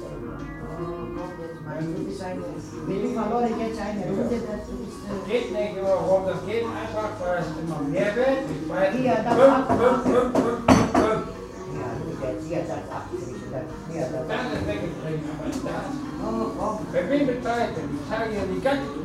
{
  "title": "Oberbarmen, Wuppertal, Deutschland - adler-klause",
  "date": "2011-02-17 18:30:00",
  "description": "adler-klause, berliner str. 149, 42277 wuppertal",
  "latitude": "51.28",
  "longitude": "7.22",
  "altitude": "163",
  "timezone": "Europe/Berlin"
}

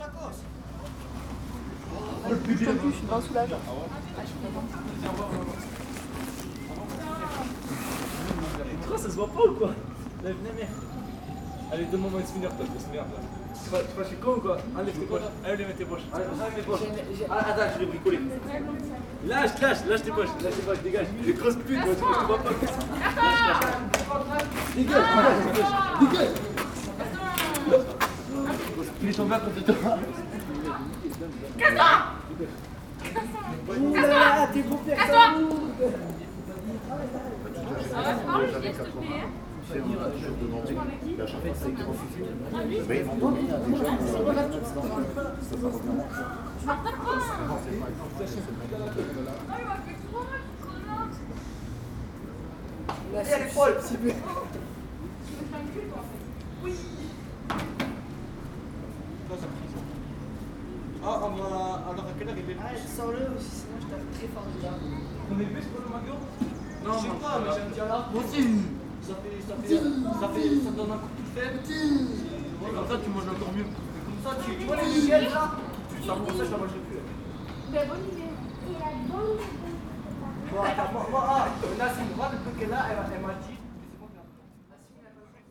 {
  "title": "Jardin Public du Foirail, Rodez, France - Adolescents bruyants",
  "date": "2017-05-24 12:10:00",
  "description": "groupe d'adolescents devant le musée Soulages\nGroup of teenagers in front of the Soulages museum",
  "latitude": "44.35",
  "longitude": "2.57",
  "altitude": "610",
  "timezone": "Europe/Paris"
}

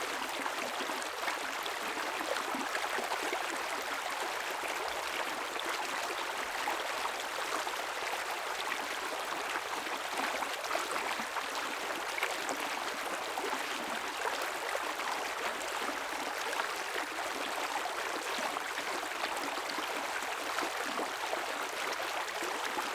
{
  "title": "中路坑溪, 桃米里 Puli Township - the stream",
  "date": "2016-04-21 10:33:00",
  "description": "stream sound\nZoom H6 XY",
  "latitude": "23.94",
  "longitude": "120.92",
  "altitude": "490",
  "timezone": "Asia/Taipei"
}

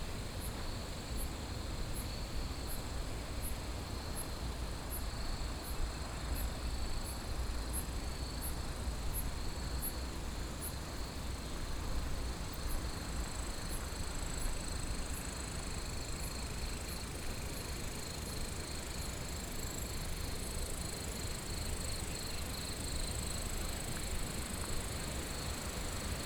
{
  "title": "桃米巷, 埔里鎮桃米里, Nantou County - Walking in a small way",
  "date": "2015-09-03 20:50:00",
  "description": "Walking in a small way, Frog chirping, Insect sounds, Stream",
  "latitude": "23.94",
  "longitude": "120.94",
  "altitude": "455",
  "timezone": "Asia/Taipei"
}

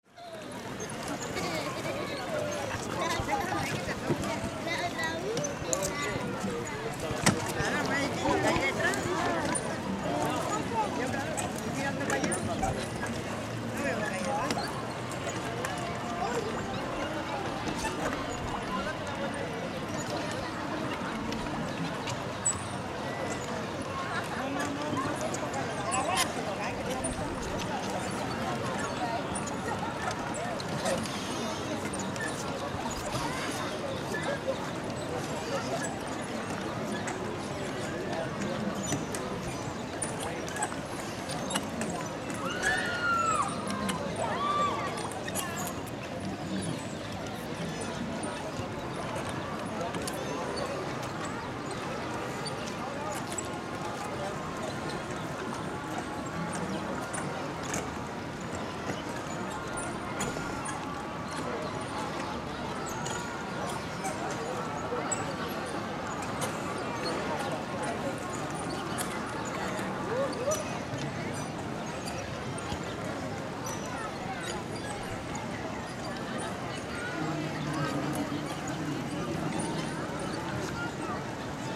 {
  "title": "Barcelona, lake parc de la ciutadella",
  "description": "Barcelona, parc de la ciutadella, lake",
  "latitude": "41.39",
  "longitude": "2.19",
  "altitude": "14",
  "timezone": "Europe/Berlin"
}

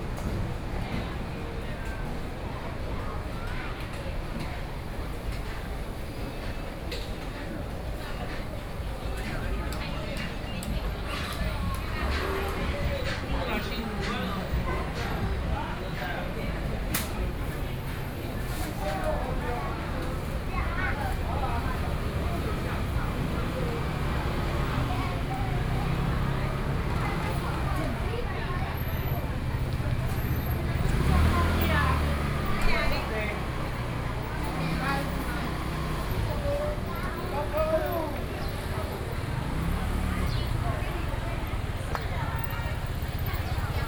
{
  "title": "民生市場, Luodong Township - Walking through the traditional market",
  "date": "2014-07-27 10:42:00",
  "description": "Walking through the traditional market, Traffic Sound, Indoor market\nSony PCM D50+ Soundman OKM II",
  "latitude": "24.68",
  "longitude": "121.77",
  "altitude": "15",
  "timezone": "Asia/Taipei"
}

